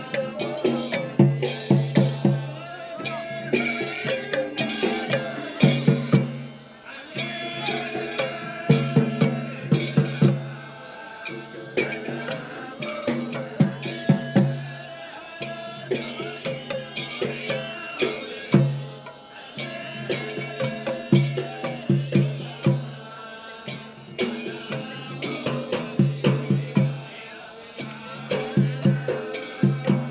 شارع الراشدين, Sudan - Dikhr in full swing @ tomb sheikh Hamad an-Neel
dhikr. recorded with Marantz cassette recorder and 2 senheizer microphones